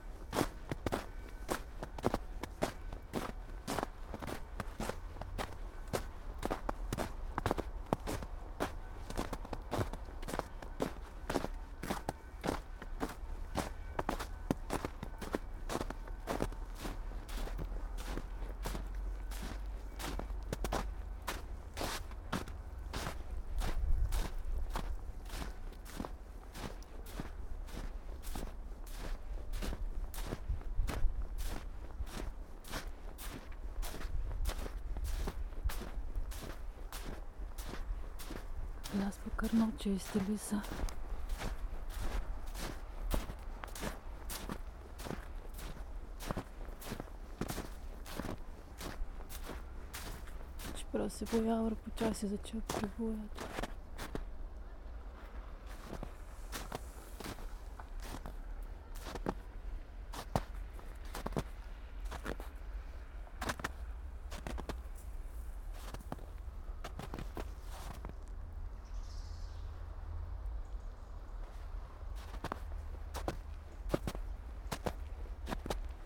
sonopoetic path, Maribor, Slovenia - walking poem
snow, steps, spoken words, bells